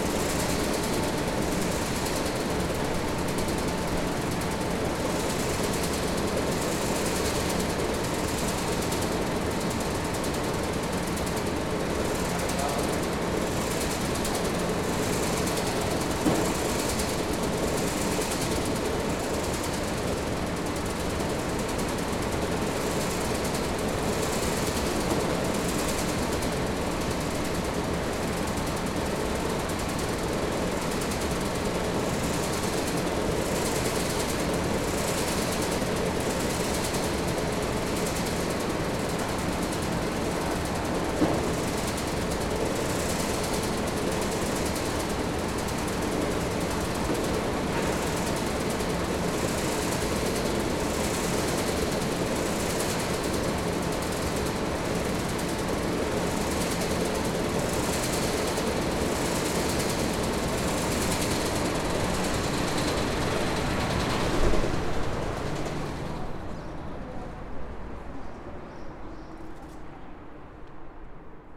Frankfurt Airport (FRA), Frankfurt am Main, Deutschland - Escelator noises
Since the airport is quite empty during the Corona times, the sound of the escalators became more audible -